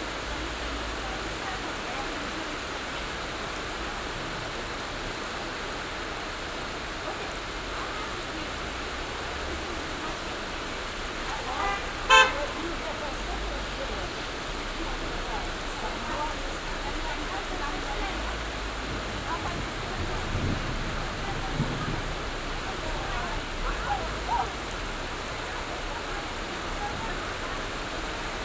Utena, Lithuania, heavy rain
Hiding in a bus station from heavy rain...And, strangely, there's conversation in english...
Utenos rajono savivaldybė, Utenos apskritis, Lietuva